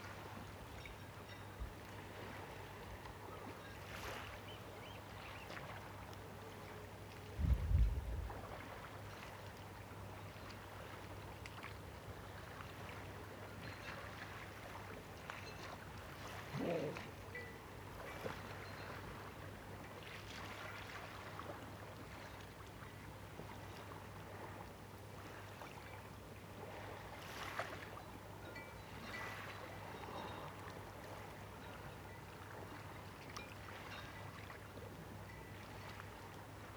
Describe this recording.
water lapping, mast rigging and seals on the island just across from the bay